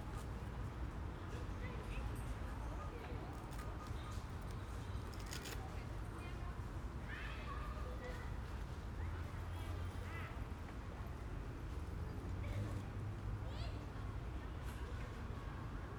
Beside the playground, Jacobystraße, Berlin, Germany - Beside the playground and the parked cars
Residential apartment blocks start very close to the concrete expanse of Alexanderplatz and the huge roads of the area. Once inside surrounded by the multi-storey buildings it is a different, much quieter, world, of car parks, green areas, trees and playgrounds. The city is very present at a distance. Sirens frequently pass, shifting their pitch at speed. But there is time for the children, rustling leaves and footsteps, even an occasional crow or sparrow.